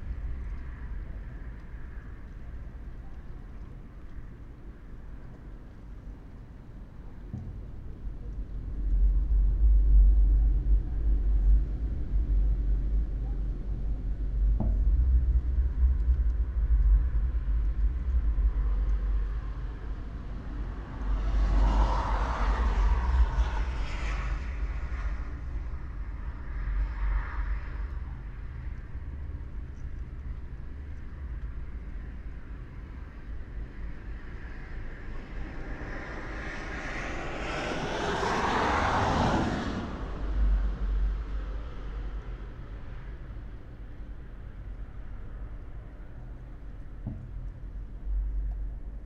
A14, Lithuania, railway bridge over highway
abandoned railway bridge over highway. omni mics and LOM geophone